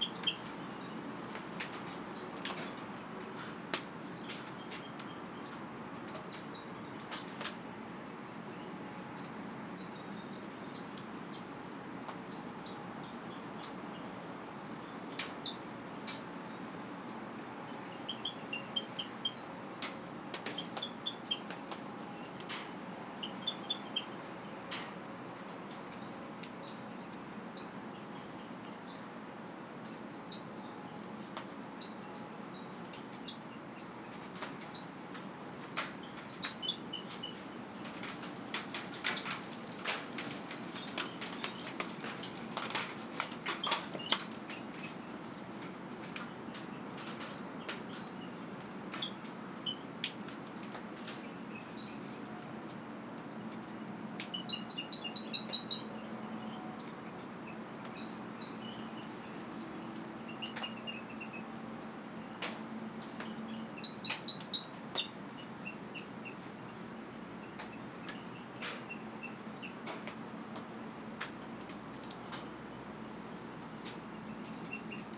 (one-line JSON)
{"title": "Blackland, Austin, TX, USA - 4 AM Drizzle", "date": "2016-03-30 04:00:00", "description": "Recorded with a pair of DPA 4060s and a Marantz PMD 661.", "latitude": "30.28", "longitude": "-97.72", "altitude": "188", "timezone": "America/Chicago"}